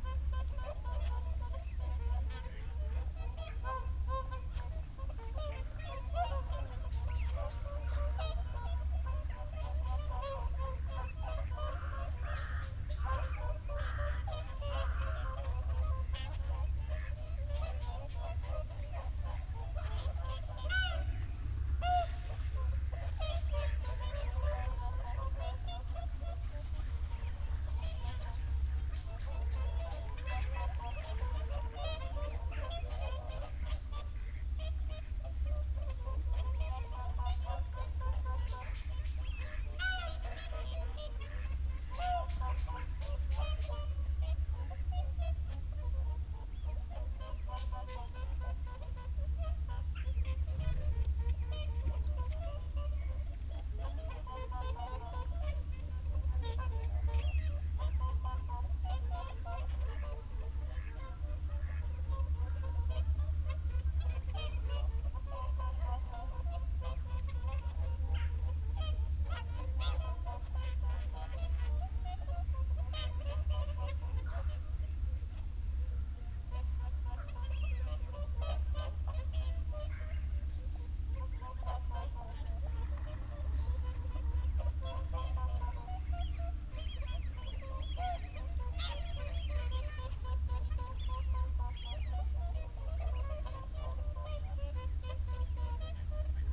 Dumfries, UK - whooper swan and jackdaw soundscape ...
whooper swan and jackdaw soundscape ... dummy head with binaural in ear luhd mics to olympus ls 14 ... bird calls from ... shoveler ... wigeon .. snipe ... lapwing ... canada teal ... blackbird ... crow ... rook ... wren ... blue tit ... great tit ... huge jackdaw flock circling from 39 mins on ... time edited unattended extended recording ...